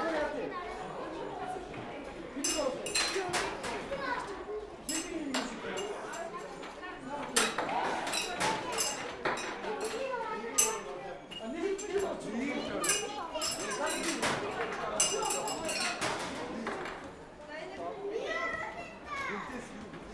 National amusement park, Ulaanbaatar, Mongolei - ring game
game where little rings had to be thrown on glass bottles, no one succeeded...